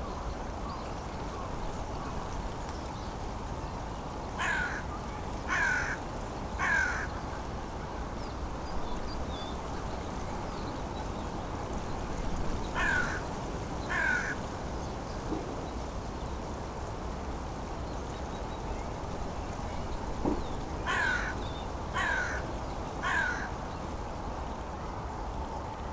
Tempelhofer Feld, Berlin, Deutschland - dry leaves
at the poplar trees, dry leaves rattling
(Sony PCM D50)
Berlin, Germany, 29 December, 15:40